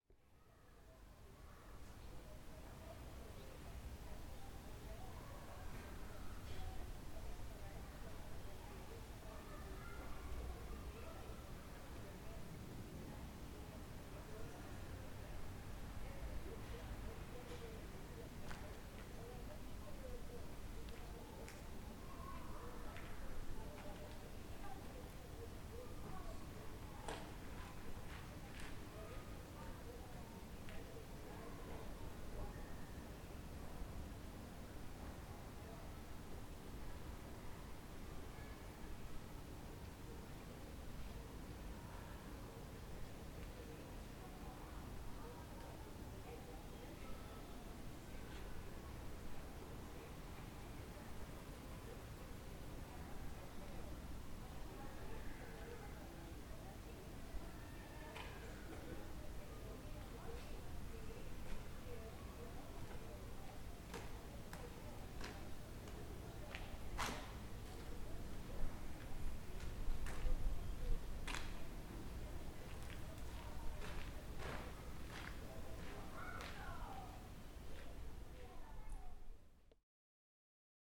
Agion Pateron, Corfu, Greece - Agion Pateron Square - Πλατεία Αγίων Πατέρων
People talking in the background.